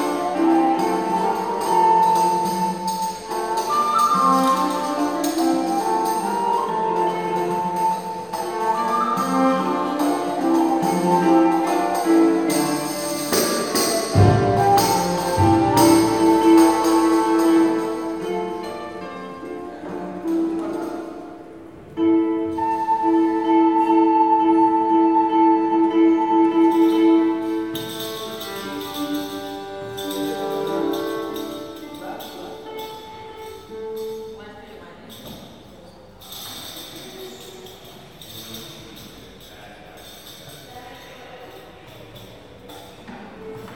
Palais national de Sintra, Largo Rainha Dona Amélia, Sintra, Portugal - Repetition of old music
In a room of the Sintra's palace, a group of musicans, Capella Sanctae Crucis, repeats for a concert of iberical music from the 16th century. The piece from an anonymous, is called 'Tres morillas'.
Marie Remandet, voice
Tiago Simas Freire, fute, cornet and conducting
Ondine Lacorne-Hébrard, viela de arco
Sara Agueda Martin, harp
Rui Silva, percussions
19 June